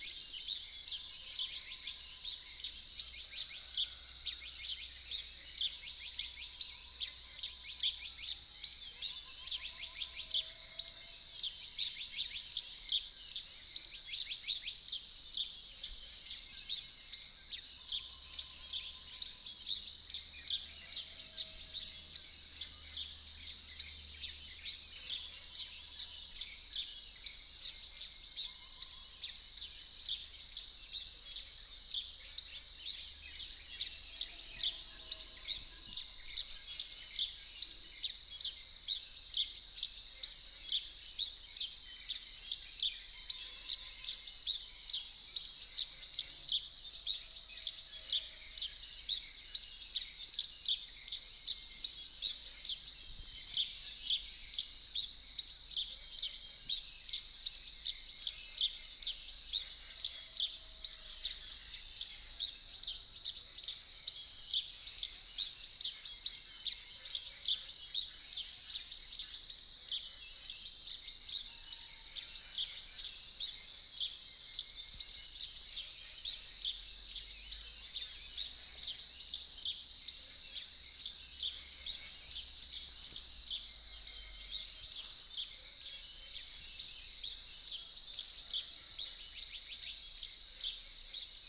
種瓜路4-2號, TaoMi Li, Puli Township - Early morning
Birdsong, Chicken sounds, Frogs chirping, Early morning
Nantou County, Taiwan, 11 June 2015